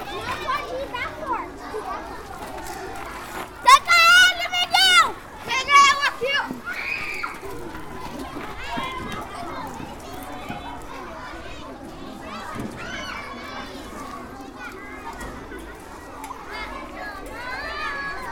R. Promissão - Jardim Flamboyant, Campinas - SP, 13091-107, Brasil - Crianças brincando no parquinho da escola Raul Pila durante o intervalo
Crianças brincando no parquinho da escola Raul Pila durante o intervalo. Gravação realizada por alunos do 4o ano da EEI Raul Pila com um gravador digital TASCAM DR 05.